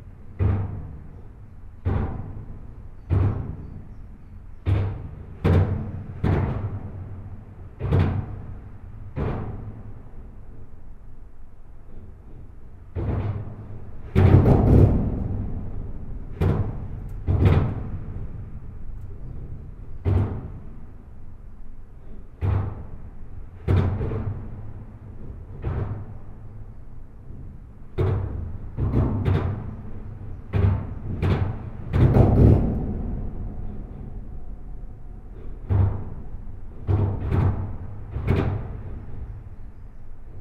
Namur, Belgique - The viaduct
This viaduct is one of the more important road equipment in all Belgium. It's an enormous metallic viaduct on an highway crossing the Mass / Meuse river.
This recording is made just below the expansion joint. Trucks make enormous impact, absorbed by special rubber piles. Feeling of this place is extremely violent.
Belgium, 19 April 2016, 07:30